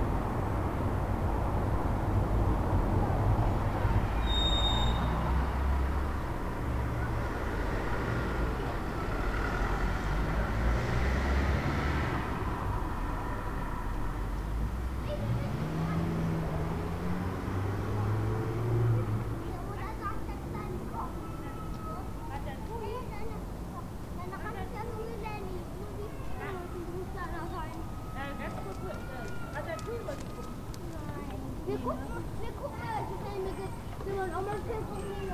Berlin: Vermessungspunkt Friedelstraße / Maybachufer - Klangvermessung Kreuzkölln ::: 12.04.2011 ::: 16:51